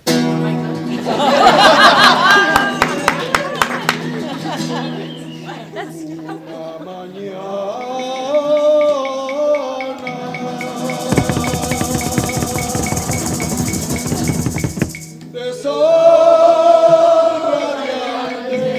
El proyecto liguistico quetzalteco
Language school graduation. Singing Bella Chao